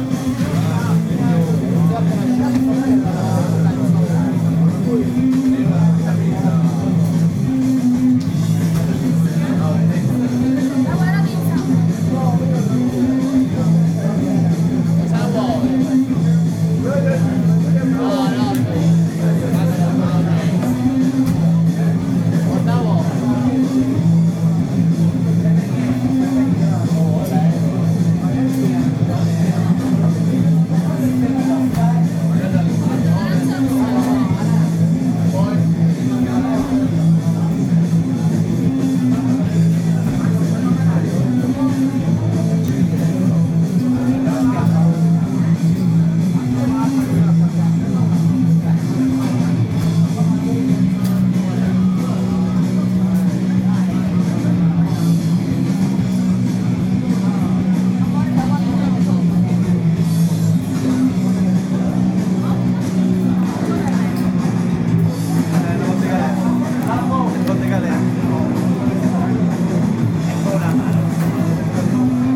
DRUNKS - Palermo night
Drunks - Palermo, una sera qualunque edirolR-09HR (ROMANSOUND)